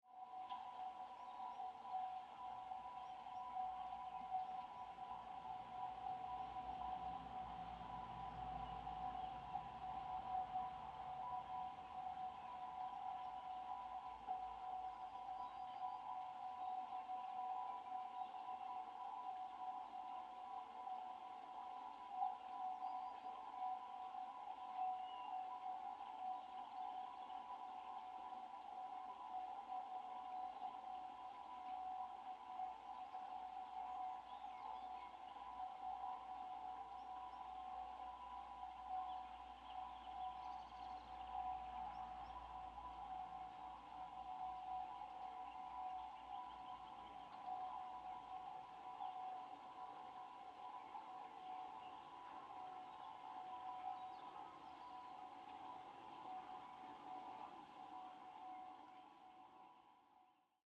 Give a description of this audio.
Vibrations in solids can be pickup by a contact microphone. Here the vibrations in the metal pipe caused by flowing water are heard.